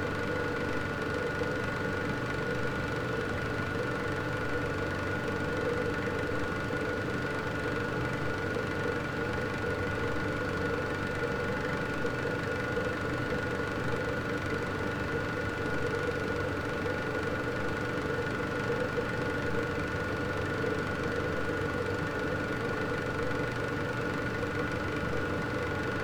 berlin: friedelstraße - the city, the country & me: water pump
sewer works site, water pump, water flows into a gully
the city, the country & me: february 6, 2014
Berlin, Germany, 6 February, ~04:00